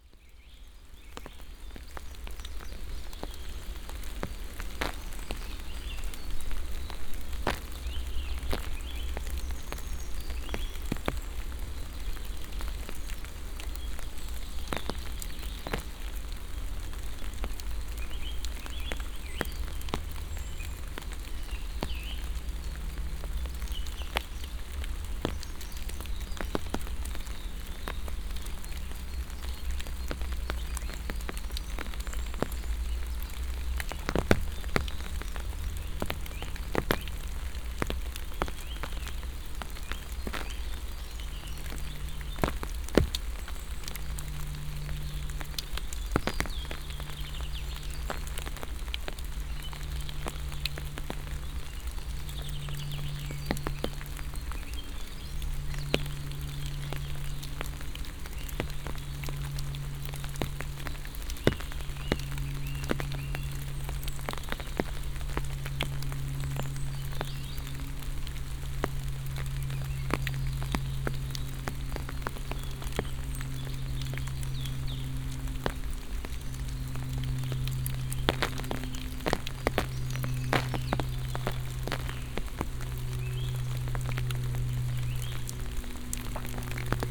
Morasko, road towards the nature reserve - rain deflector
(binaural) crunch of rain drops falling from the trees on my umbrela. turbulent plane roar. wraped in a web of bird chirps.
Poznań, Poland, 27 March, ~11:00